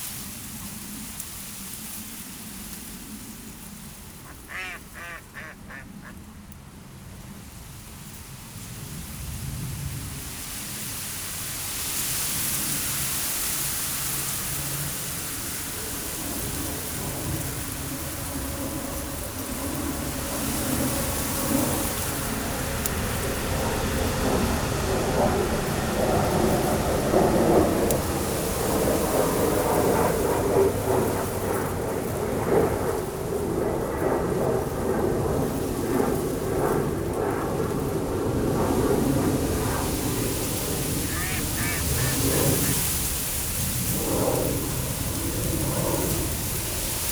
The wind in the reeds and a small barge arriving in the sluice (Ruisbroeck sluis).